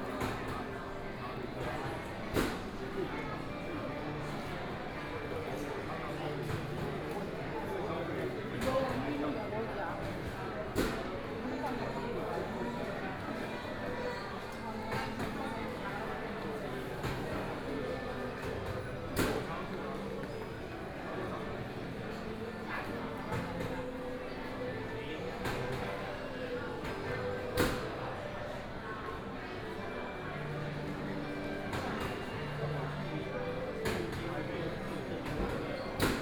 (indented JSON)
{
  "title": "Guanxi Service Area, Hsinchu County - Sitting inside seating area",
  "date": "2013-12-22 15:22:00",
  "description": "Sitting inside seating area, Shopping street sounds, The sound of the crowd, walking out of the rest area, Binaural recording, Zoom H6+ Soundman OKM II",
  "latitude": "24.80",
  "longitude": "121.19",
  "altitude": "241",
  "timezone": "Asia/Taipei"
}